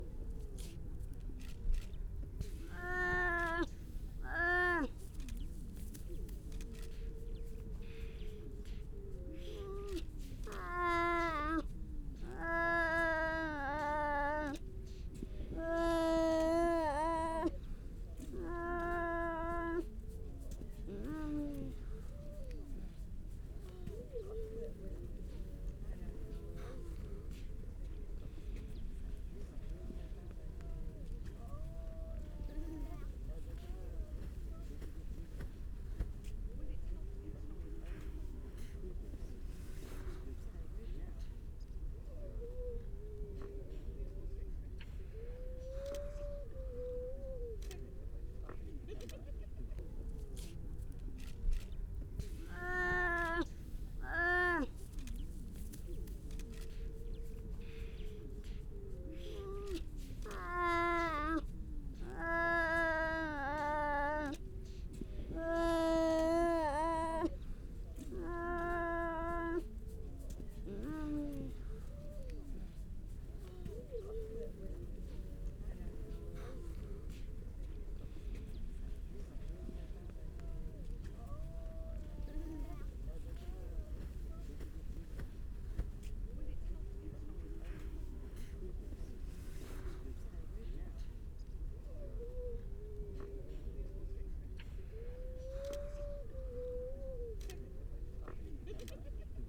Unnamed Road, Louth, UK - grey seals soundscape ...
grey seal soundscape ... parabolic ... grey seal pup call ... have repeated the track to get over the one minute length ...